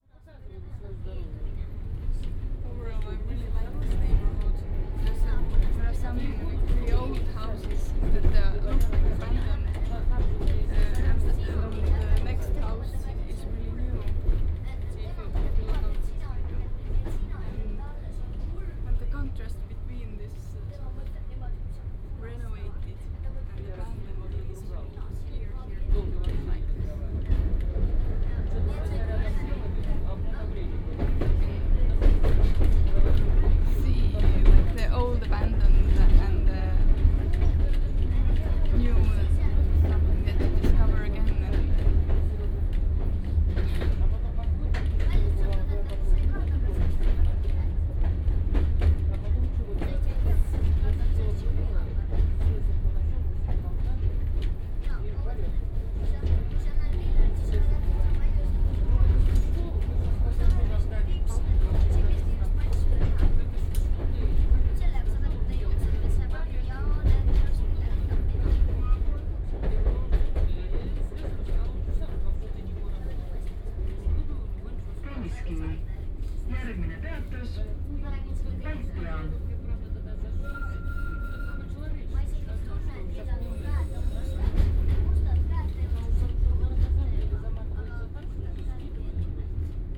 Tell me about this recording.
in tram at tallinn main station balti jaam